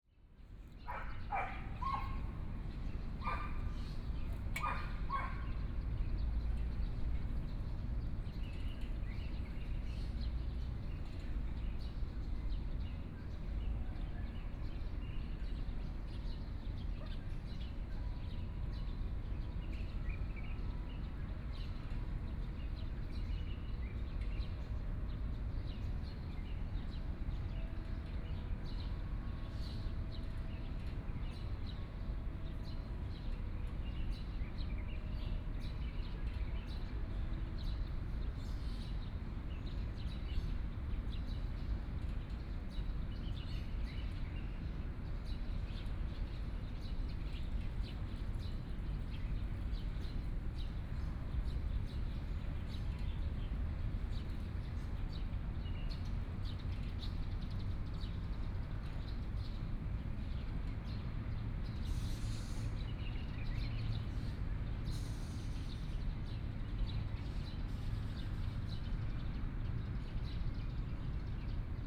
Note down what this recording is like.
Noon in the park, Hot weather, Birds